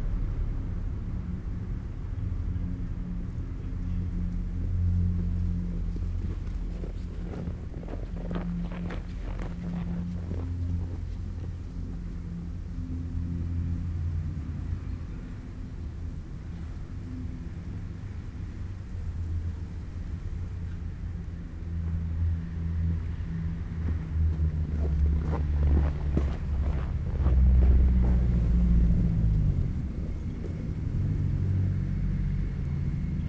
Berlin: Vermessungspunkt Friedel- / Pflügerstraße - Klangvermessung Kreuzkölln ::: 02.12.2010 ::: 19:35
Berlin, Germany, 2 December 2010, 19:35